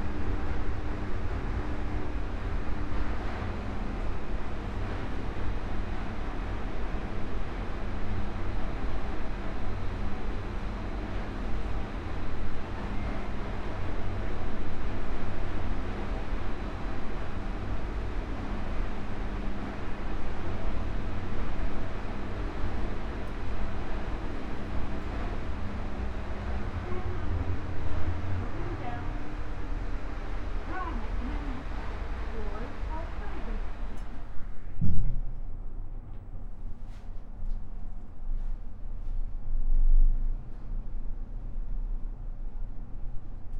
mori tower, skydeck, roppongi, tokyo - below skydeck
machinery and elevator
Tokyo, Japan, 16 November 2013, 19:55